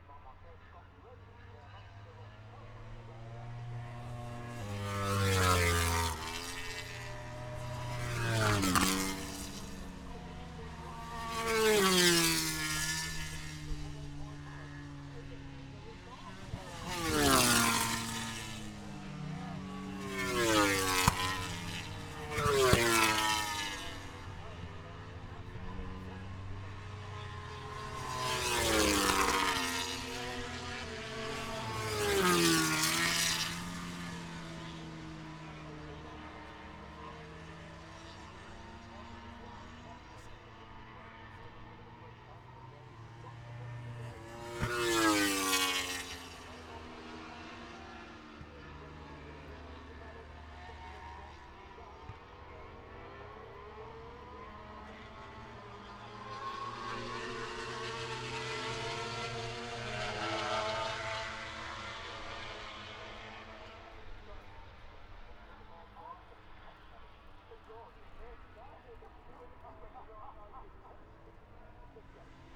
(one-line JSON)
{"title": "Silverstone, UK - british motorcycle grand prix 2016 ... moto grand prix ...", "date": "2016-09-02 14:05:00", "description": "moto grand prix free practice two ... Maggotts ... Silverstone ... open lavalier mics on T bar strapped to sandwich box on collapsible chair ... windy grey afternoon ...", "latitude": "52.07", "longitude": "-1.01", "timezone": "Europe/London"}